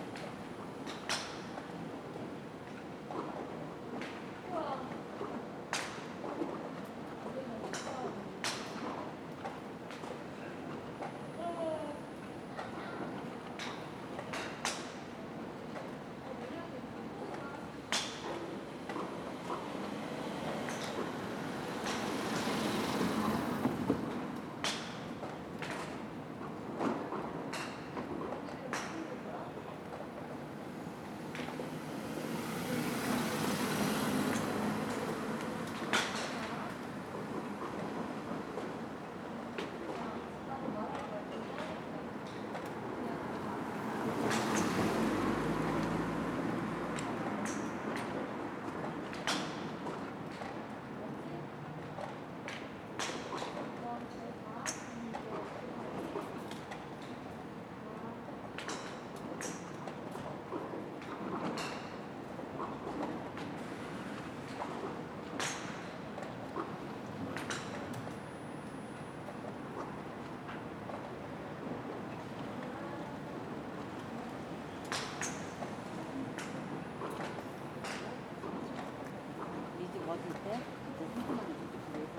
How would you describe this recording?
Screen Golf Center, Banpo-dong, numerous practitioners hitting golf, 반포동 스크린 골프 연습장, 골프공 치는 소리